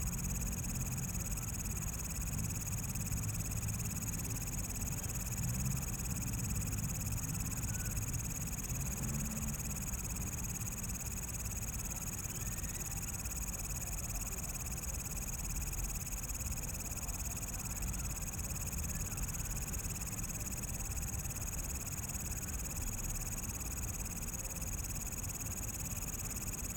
Taipei EXPO Park, Taiwan - Night in the park
Night in the park, Insects, Traffic Sound, People walking in the park
Please turn up the volume a little
Zoom H6, M/S